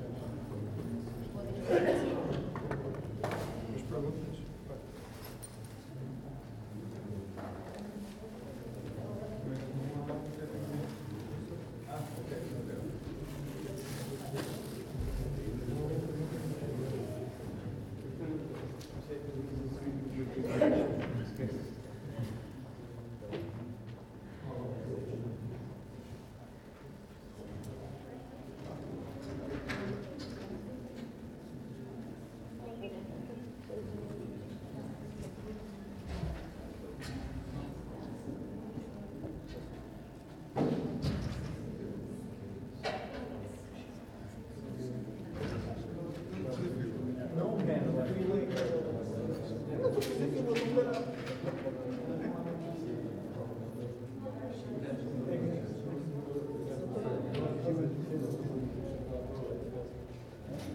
Nossa Senhora do Pópulo, Portugal - Auditório EP2
An Auditorium for classes in ESAD.CR, which is round and has an specific acoustic.